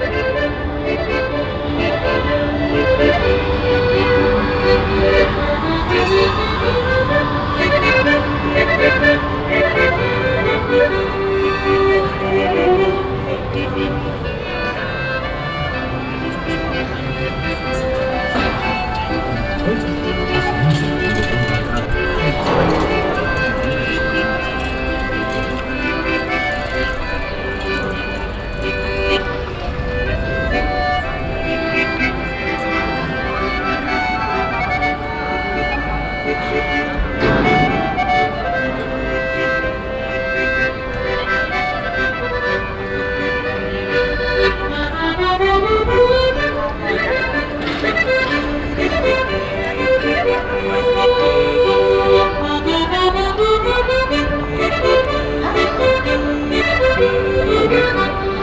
Altstadt, Bremen, Deutschland - bremen, in front of st.petri dom
At he square in front of the St. petri Dom. The sound of an accordeon player who sits in front of the cathedral stairways. In the distance the sound of metal pipes handled by construction workers on the market place.
soundmap d - social ambiences and topographic field recordings